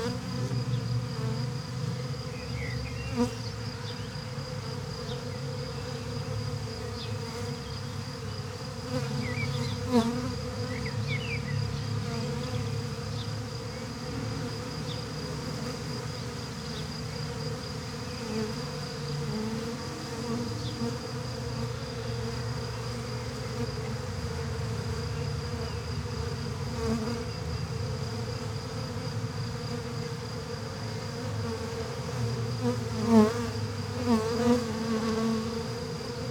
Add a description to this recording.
Bees swarm out to collect around a new queen bee